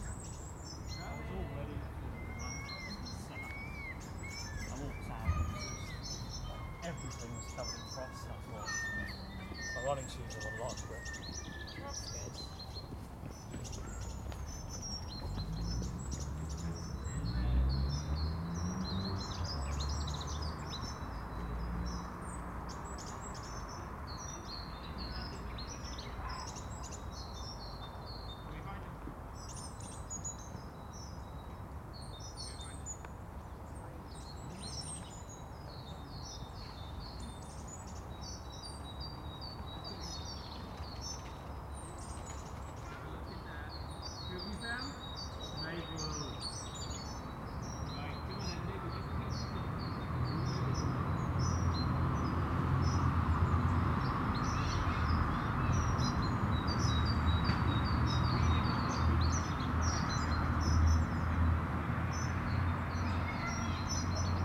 The Poplars Roseworth Avenue The Grove
A family play hide and seek
of a sort
the three children dressed in ski suits
Birdsong comes and goes
but I see few birds
The low winter sun is lighting up the grass
a carpet of frost-droplet sparkles